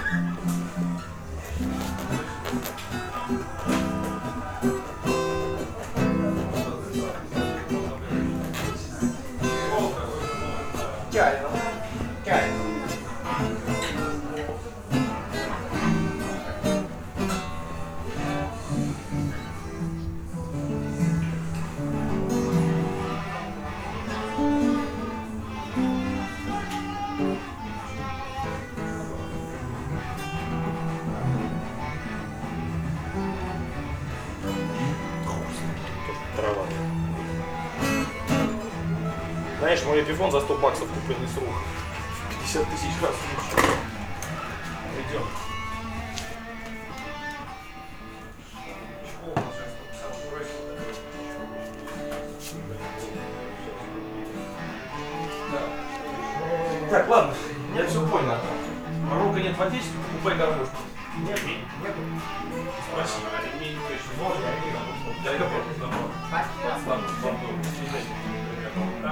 Moscow, Sadovaya-Triumfal'naya - Music store
Musicians, music, acoustic guitar, electric guitar, speech.
Moscow, Russian Federation